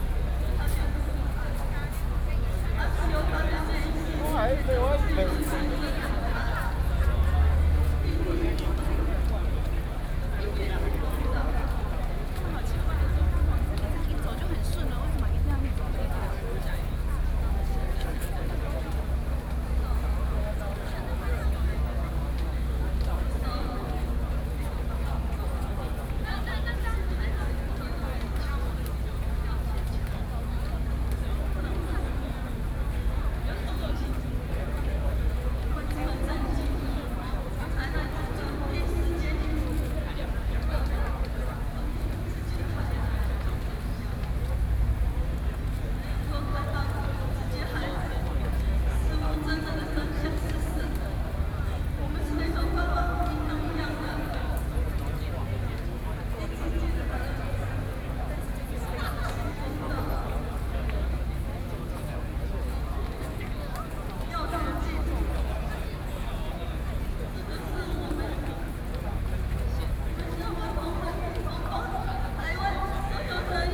Ketagalan Boulevard - Protest
Protest against the government, A noncommissioned officer's death, Sony PCM D50 + Soundman OKM II